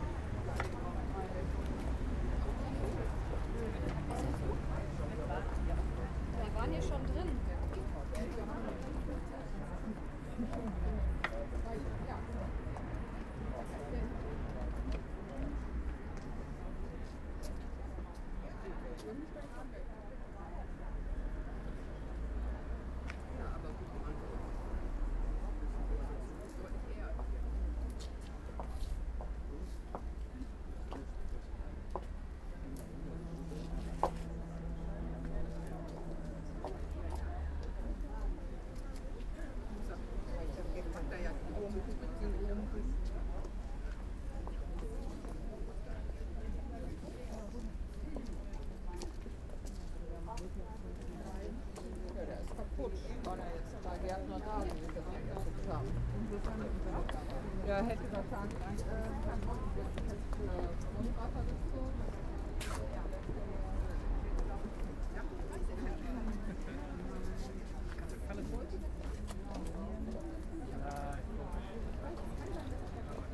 bochum, schauspielhaus, audience arriving
in front of the "kammerspiele" (i. e. the intimate theatre of the bochum schauspielhaus).
recorded june 23rd, 2008 before the evening show.
project: "hasenbrot - a private sound diary"